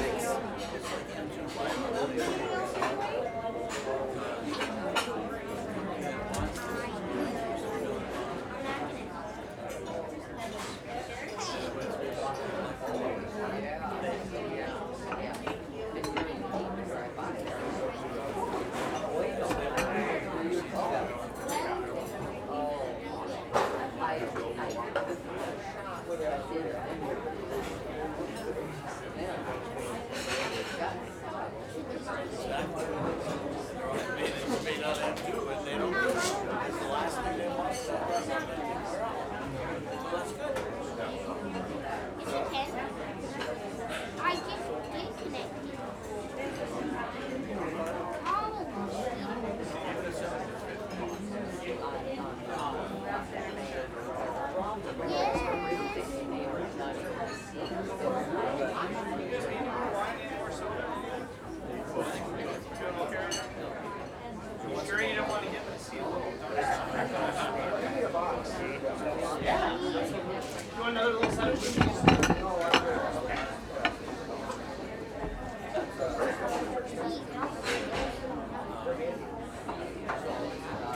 The sounds of lunch time at Donatellis